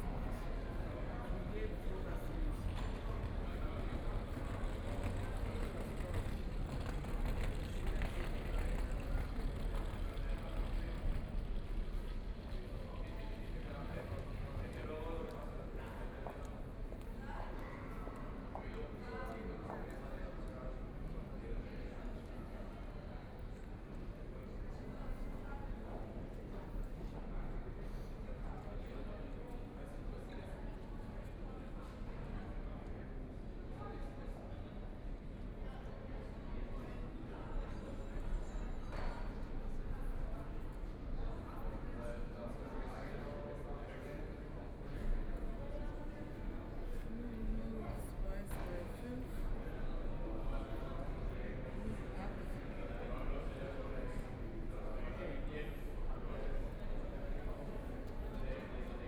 {"title": "Theresienwiese, 慕尼黑德國 - U bahn", "date": "2014-05-06 21:25:00", "description": "Line U5, from Hauptbahnhof station to Theresienwiese station", "latitude": "48.14", "longitude": "11.55", "altitude": "524", "timezone": "Europe/Berlin"}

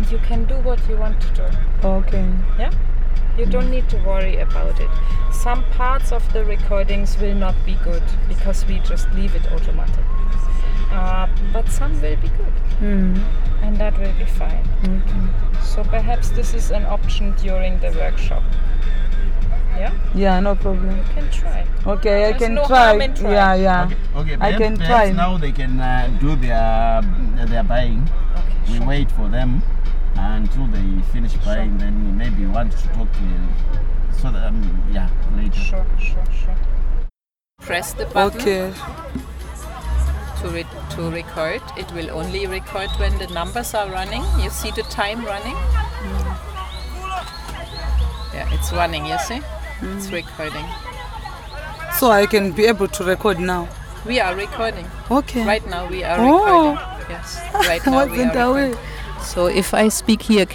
{"title": "Bus station, Binga, Zimbabwe - audio training with Donor in the car", "date": "2018-09-20 16:04:00", "description": "I'm sharing a speed training in binaural recording with Donor still in the car at the bus station. Together with the experienced ilala trainer Notani Munkuli they were about to take off for the rural areas in Chinonge for a weavers workshop. Donor would be documenting the training for us while also participating in the workshop...", "latitude": "-17.62", "longitude": "27.34", "altitude": "626", "timezone": "Africa/Harare"}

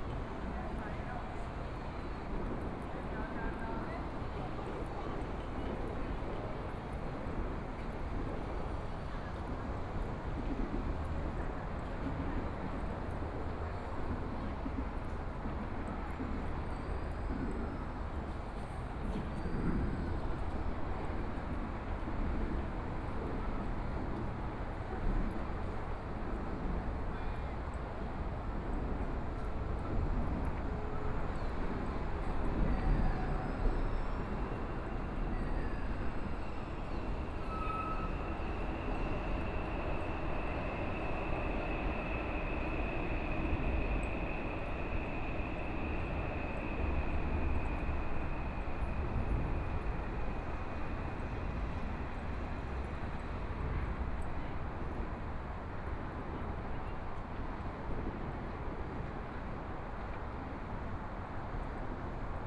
{"title": "Taipei City, Taiwan - Walking along the river", "date": "2014-02-16 16:16:00", "description": "Holiday, Walking along the river, Sunny mild weather, Traffic Sound, Aircraft flying through, MRT train sounds, Sound from highway\nBinaural recordings, ( Proposal to turn up the volume )\nZoom H4n+ Soundman OKM II", "latitude": "25.08", "longitude": "121.52", "timezone": "Asia/Taipei"}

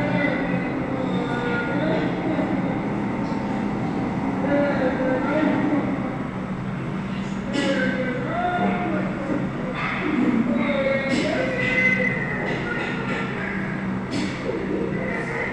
{"title": "Oberkassel, Düsseldorf, Deutschland - Düsseldorf, Stoschek Collection, video works", "date": "2012-11-22 11:45:00", "description": "Inside the Juli Stoschek Collection building at the basement floor in a corridor with video works during the exhibition - number six: flaming creatures.\nThe sound of six different performance videos presented parallel on screens in a narrow, dark corridor.\nThis recording is part of the exhibition project - sonic states\nsoundmap nrw - sonic states, social ambiences, art places and topographic field recordings", "latitude": "51.23", "longitude": "6.74", "altitude": "40", "timezone": "Europe/Berlin"}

